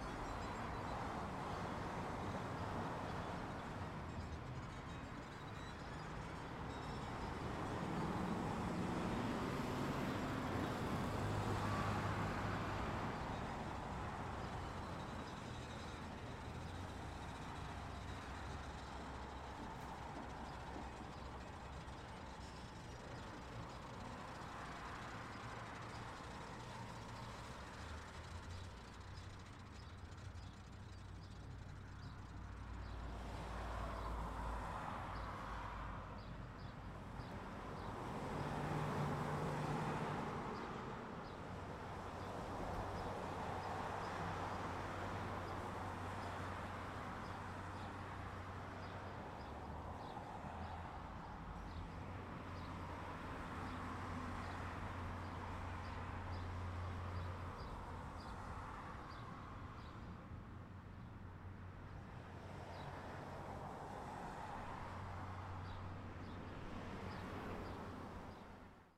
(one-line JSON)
{"title": "Morning traffic, Guilderland, New York USA - Morning Traffic Noise", "date": "2012-07-18 08:36:00", "description": "Morning traffic noise along Western Avenue, captured from a parking lot at the intersection of Ardsley Road in Guilderland, New York. With some radio sounds in the background.", "latitude": "42.69", "longitude": "-73.86", "altitude": "86", "timezone": "America/New_York"}